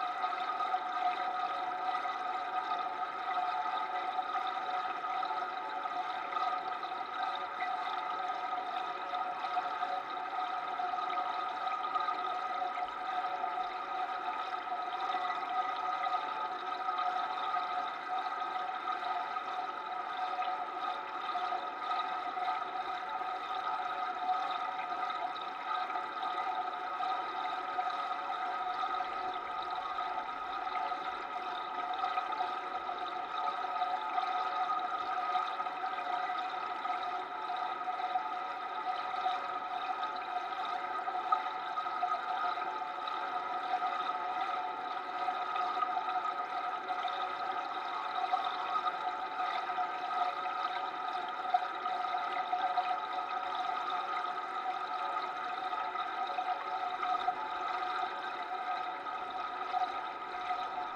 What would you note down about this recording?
Berlin Buch, former trickle fields / Rieselfelder, where for more than a century the waste water of the city was spread out into the landscape. During the last 20 year, water management and renaturation of formerly straightened ditches has been established, in order to keep water available to the vegetation in the area, feed swamps and moores and clean the still polluted water. Contact Mic recording of the water flow. (Sony PCM D50, DIY contact mics)